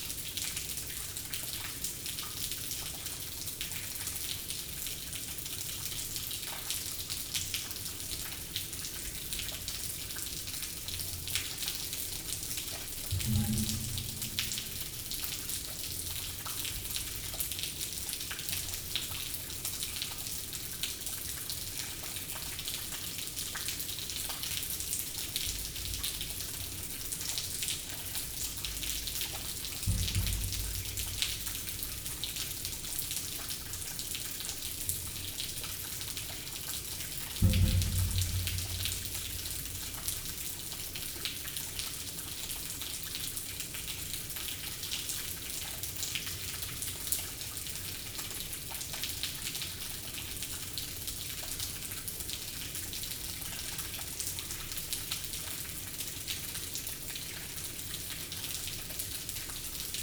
Valenciennes, France - Sewers soundscape
Into the Valenciennes sewers, sounds of the water raining from everywhere. To be here is the best Christmas day ever !